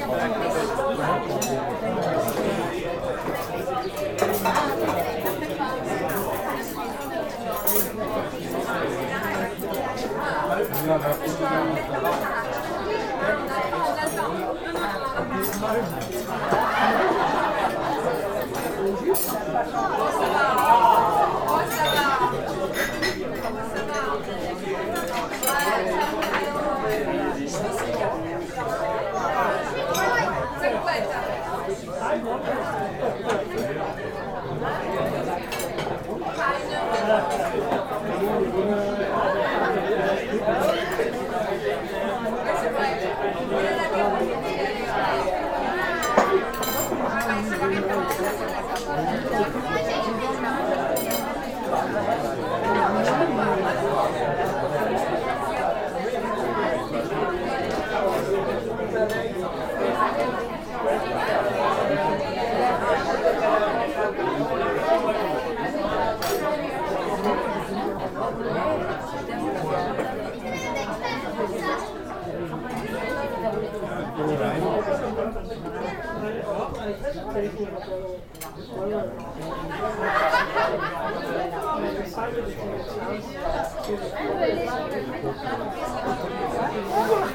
Mortagne. Restaurant terrace ambience
Harbour restaurant terrace. Ambience
Mortagne-sur-Gironde, France, 19 July 2011, 13:46